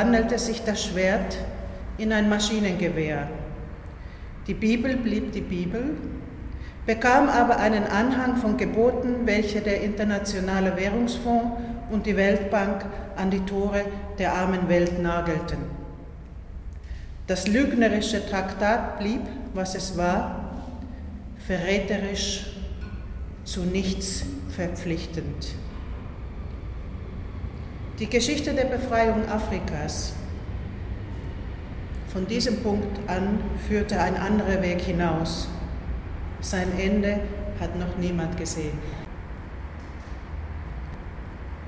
Echos unter der Weltkuppel 11 Epilog
Hamburg, Germany, 1 November 2009, ~14:00